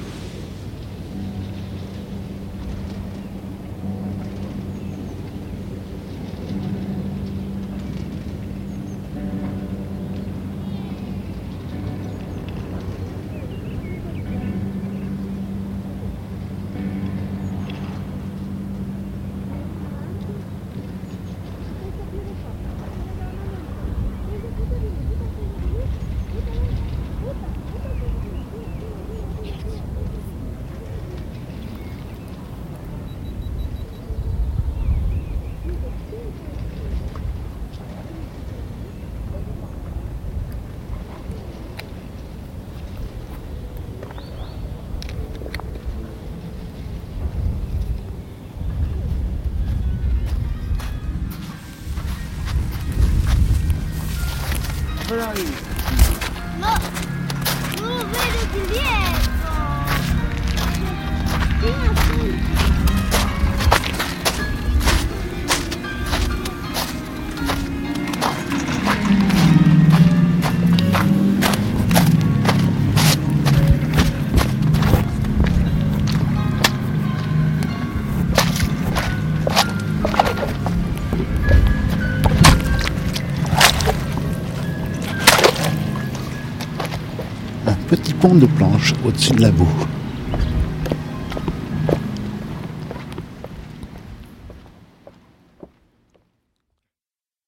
Bardonecchia, little ski place in the old way. There is a delicate balance between the sounds of skiers, the voices & the dog reverberating on the next forest, the mecanics of chairlifts, wind, steps on the melting springtime snow &, by the end, the music coming out from the cabin & creating a funny decontextualisation...